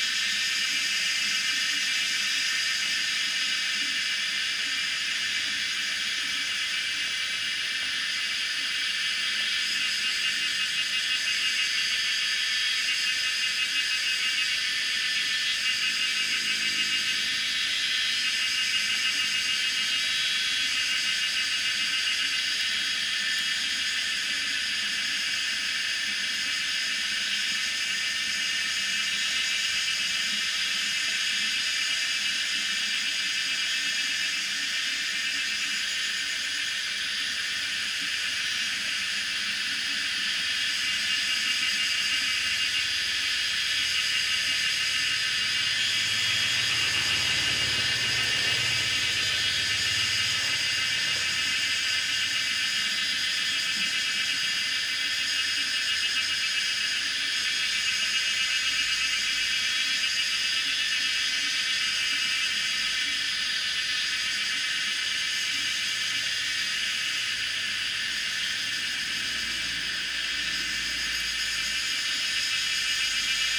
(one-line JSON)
{"title": "Taomi Ln., 桃米里茅埔坑 - In front of the temple square", "date": "2016-05-16 16:46:00", "description": "Cicadas cry, The sound of water, Traffic Sound, In front of the temple square\nZoom H2n MS+XY", "latitude": "23.94", "longitude": "120.94", "altitude": "524", "timezone": "Asia/Taipei"}